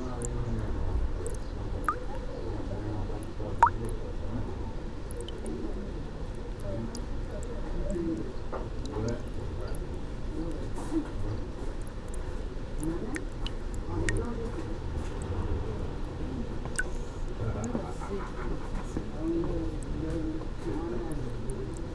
Taizoin, zen garden, Kyoto - chōzubachi, bamboo tube, water drops